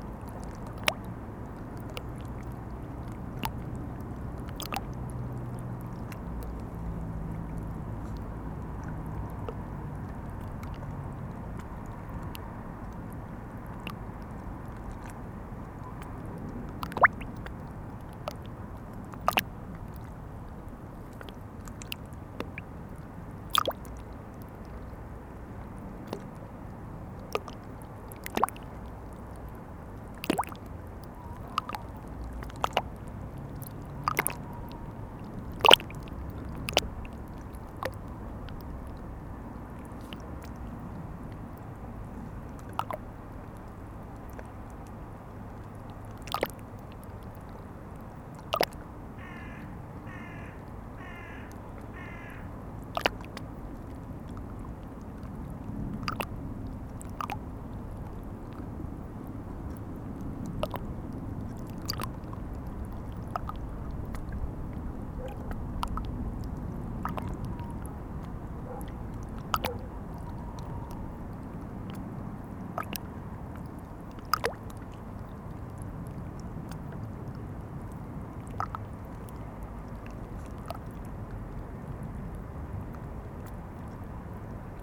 Sartrouville, France - Seine river
Sound of the Seine river flowing, on a quiet autumn evening.
23 September, 20:00